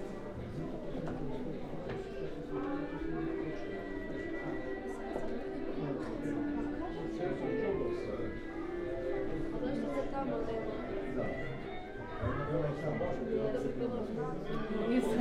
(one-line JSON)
{"title": "Rijeka, Croatia, Night Of Museums - Night of Museums 2017 - Muzej Grada Rijeke", "date": "2017-01-27 19:45:00", "description": "Night Of Museums 2017", "latitude": "45.33", "longitude": "14.44", "altitude": "35", "timezone": "GMT+1"}